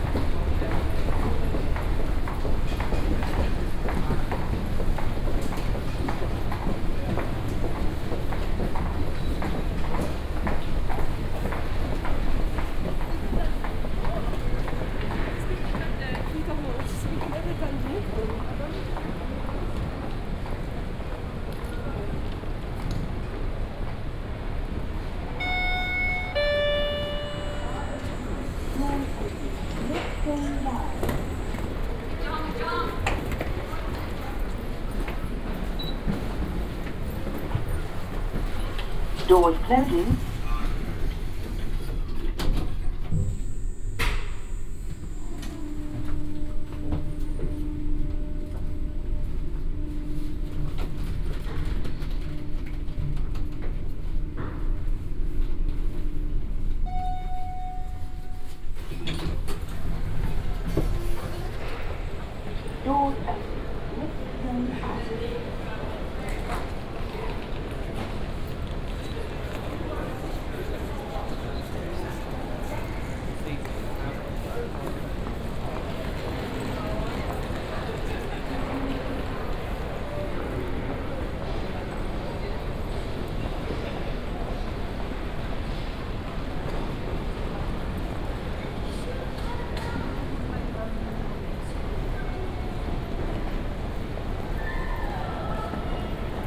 London Heathrow airport, Terminal 5, waiting for departure, walking around
(Sony D50, OKM2)